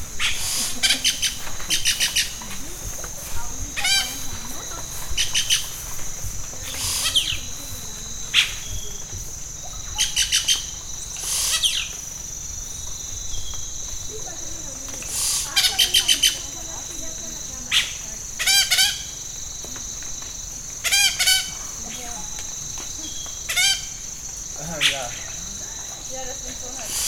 Parque Nacional Natural Amacayacu, Amazonas, Colombia - Pájaro Arrendajo
Este pájaro imita a otras aves, ranas y hasta machete afilando
20 August 2013, 18:05